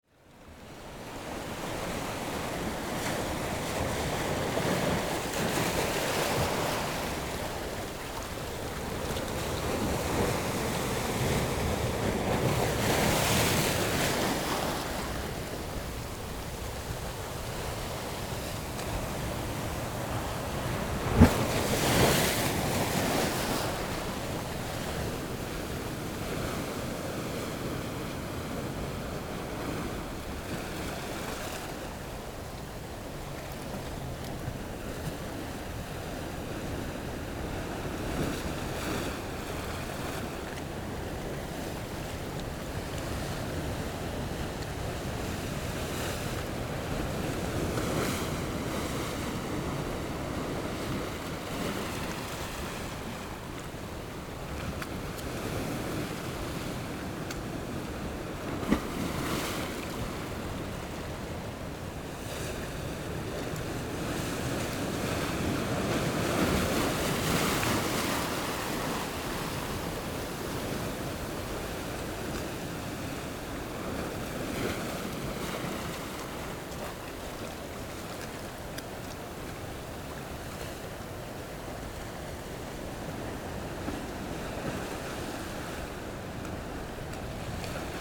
三貂角, New Taipei City - sound of the waves
Coastal, Sound of the waves
Zoom H6 MS mic+ Rode NT4